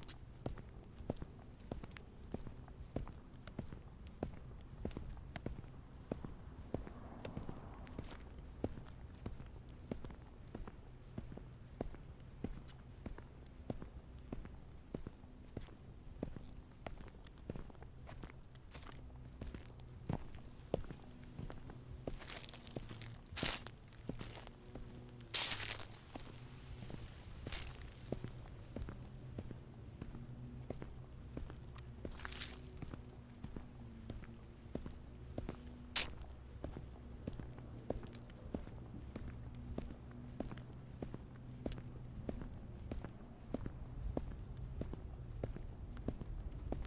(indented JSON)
{
  "title": "Tårngade, Struer, Denmark - Dry leaves on Tårngade, Struer (left side of street) 2 of 2",
  "date": "2022-09-29 15:33:00",
  "description": "Start: Ringgade/Tårngade\nEnd: Tårngade/Danmarksgade",
  "latitude": "56.49",
  "longitude": "8.60",
  "altitude": "22",
  "timezone": "Europe/Copenhagen"
}